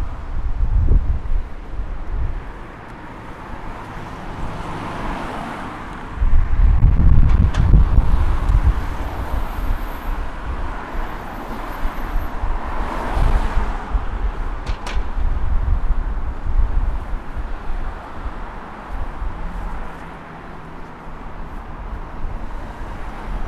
Redheugh Bridge/Scotswood Rd, Newcastle upon Tyne, UK - Redheugh Bridge/Scotswood Road, Newcastle upon Tyne
Redheugh Bridge/Scotswood Road.
6 May 2017